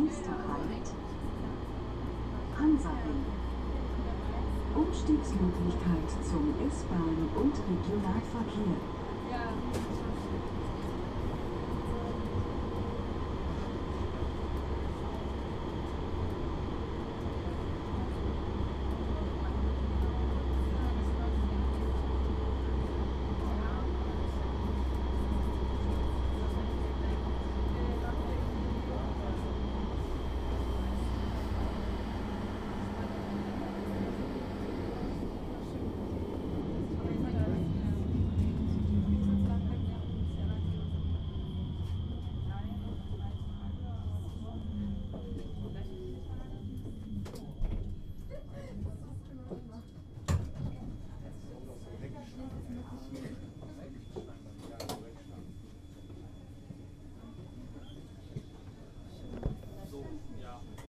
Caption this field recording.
Silent travelling with the tram in Cologne.